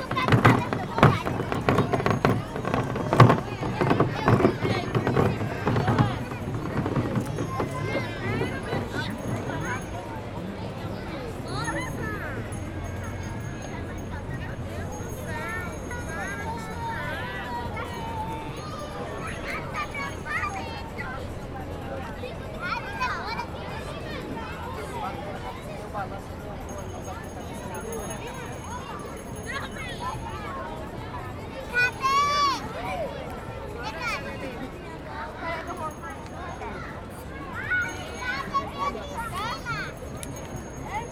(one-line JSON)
{"title": "Donal Lindu Park - Field Recording", "date": "2012-08-05 15:48:00", "description": "Record using a H4n (120)", "latitude": "-8.14", "longitude": "-34.90", "altitude": "7", "timezone": "America/Recife"}